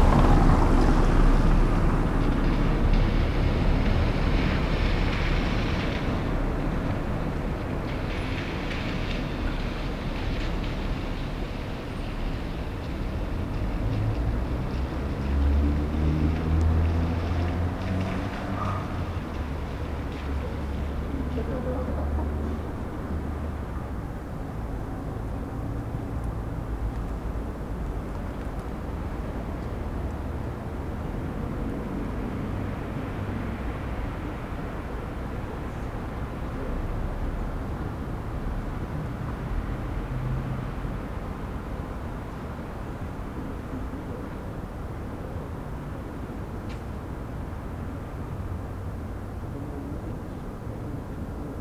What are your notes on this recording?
the city, the country & me: december 4, 2013